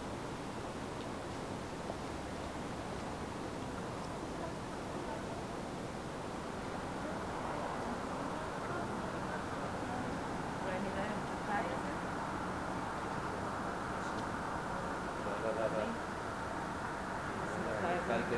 Berlin: Vermessungspunkt Maybachufer / Bürknerstraße - Klangvermessung Kreuzkölln ::: 09.11.2012 ::: 02:27
Berlin, Germany, November 2012